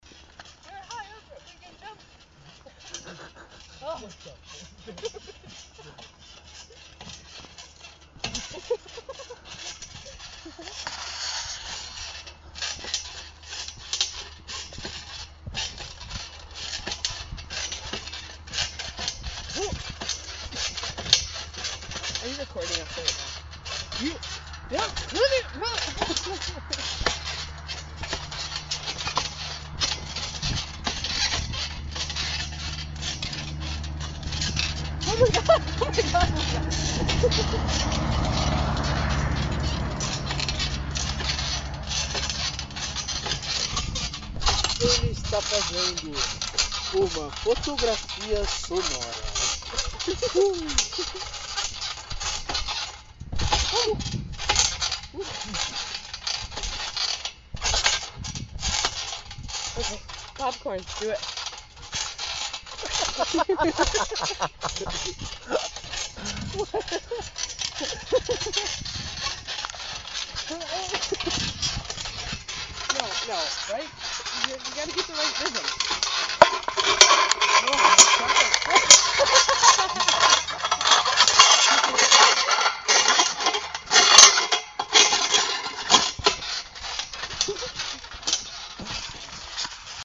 The Trampolin. Brandenburg, Kloster Lehnin
The trampolin, the pheromone slingshot.
Kloster Lehnin, Germany, 20 June 2010